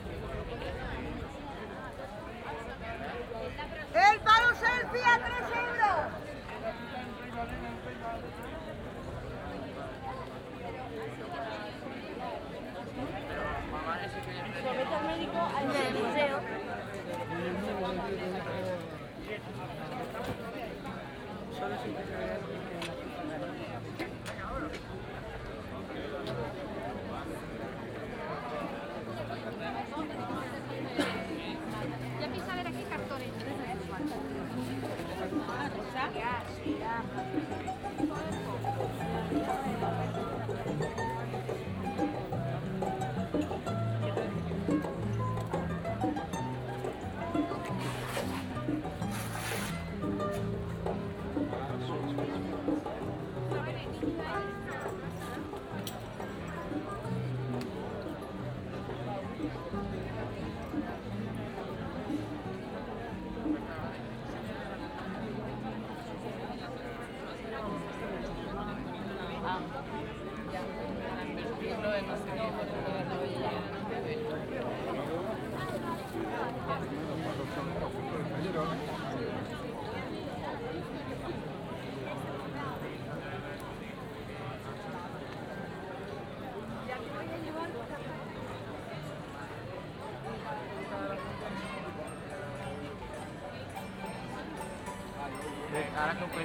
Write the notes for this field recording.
Rastro Field recording ( Organillo included, typ. traditional tune), Zoomh1+Soundman – OKM II Classic Studio Binaural